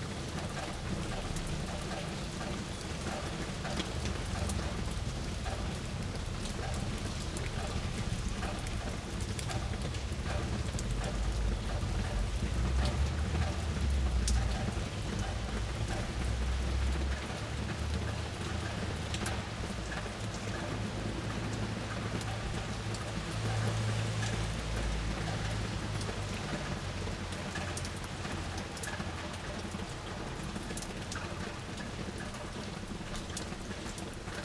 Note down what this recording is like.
Labour day ORTF recording from balcony during confinement, rain and drops on the balcony